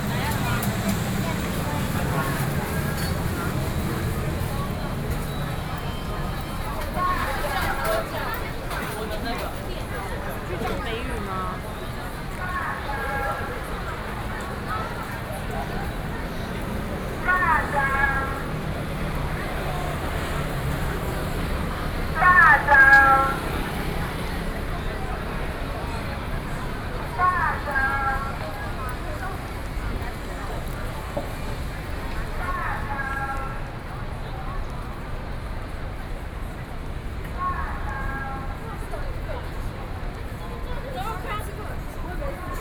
Nanyang Street, Taipei - soundwalk
soundwalk, Sony PCM D50 + Soundman OKM II
台北市 (Taipei City), 中華民國, 1 May 2013, ~18:00